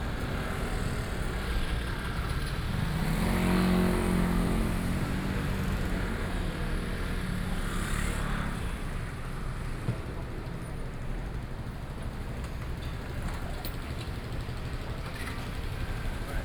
Walking in the market area, Traffic Sound, Walking towards the north direction